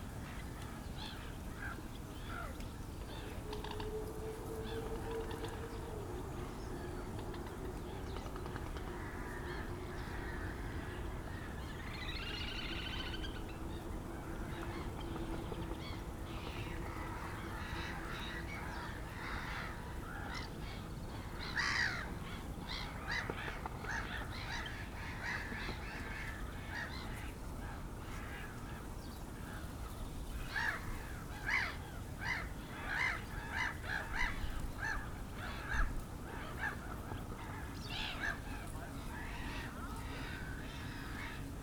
Moorlinse, Berlin-Buch, Deutschland - spring afternoon
place revisited on a Sunday afternoon in spring, too much wind.
(Sony PCM D50, DPA4060)